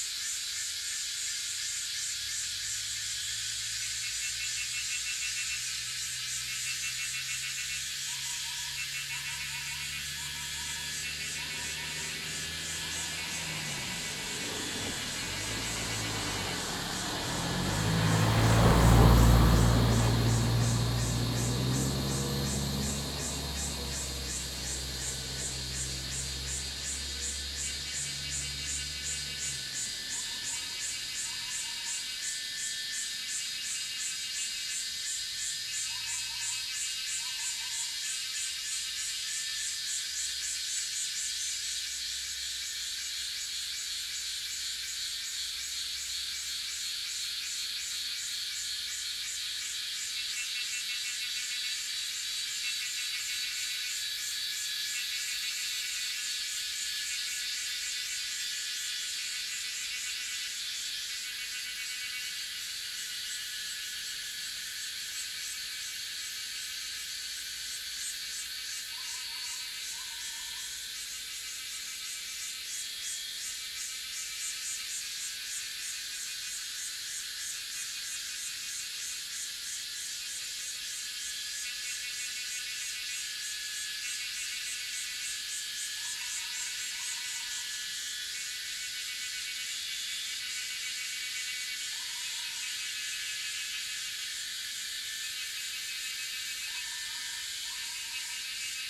五城村, Hualong Ln., Yuchi Township - Cicada sounds
Cicada sounds, Bird sounds
Zoom H2n MS+XY
Nantou County, Yuchi Township, 華龍巷43號, 8 June 2016